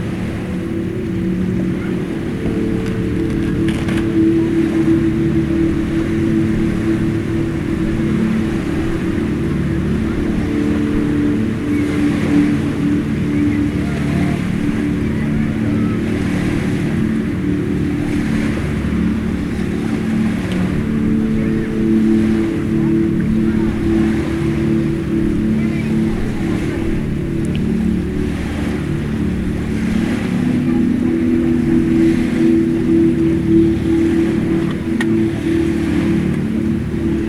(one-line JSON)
{"title": "Alghero Sassari, Italy - Marina", "date": "2005-08-08 00:05:00", "description": "I recorded this while laying on the beach in Alghero. I'm not sure what was being played on the speakers on the beach that day but it mixed very well with the sounds of the beach.", "latitude": "40.57", "longitude": "8.32", "altitude": "10", "timezone": "Europe/Rome"}